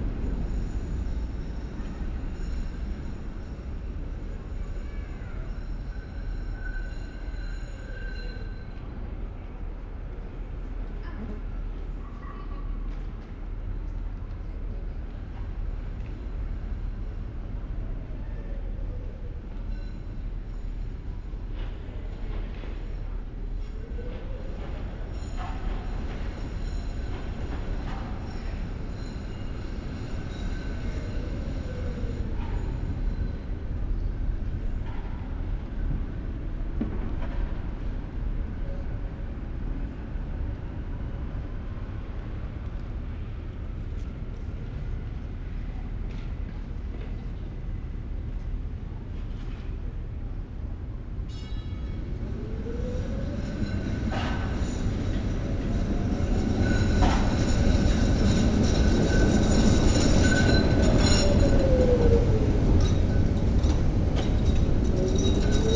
Brussels, Altitude 100
Sunday Morning, day without cars in Brussels, jus a tram, an ambulance abd thé belles front the Saint-Augustin Church. Dimanche matin, le 20 septembre, à lAltitude 100. Cest la journée sans voitures mais il y aura quand même une ambulance, un bus et un tram. Et la volée de cloches de léglise Saint-Augustin, bien entendu.
Vorst, Belgium, 20 September 2009, 10:37